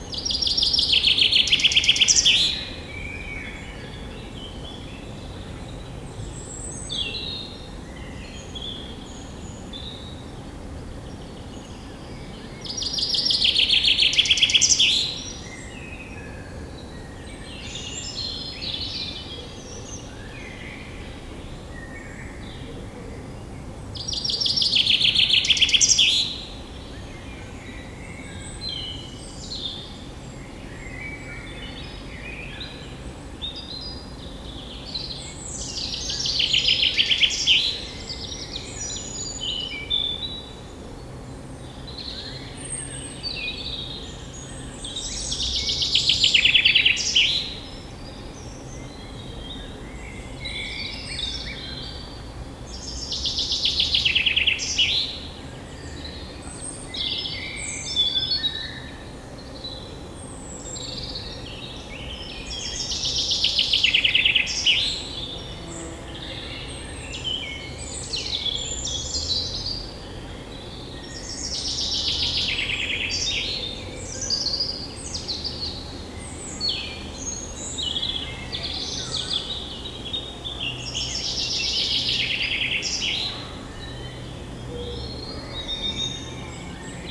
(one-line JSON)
{"title": "Lasne, Belgique - In the woods", "date": "2017-05-21 16:30:00", "description": "Recording of the birds in the woods. The first bird is a Common Chaffinch. After it's a Blackbird.", "latitude": "50.70", "longitude": "4.51", "altitude": "113", "timezone": "Europe/Brussels"}